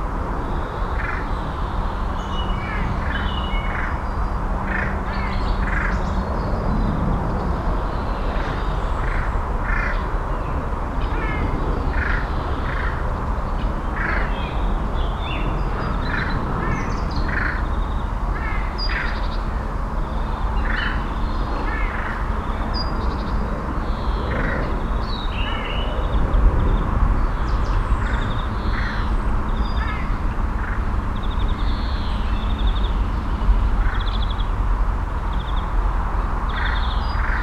ratingen, frommeskothen, waldfriedhof 01
auf dem friedhofgelände mittags, krähen und andere vögel, gleichmässiges verkehrsschwellen der nahe liegenden autobahn 44, flugzeugüberflug
soundmap nrw
- social ambiences, topographic field recordings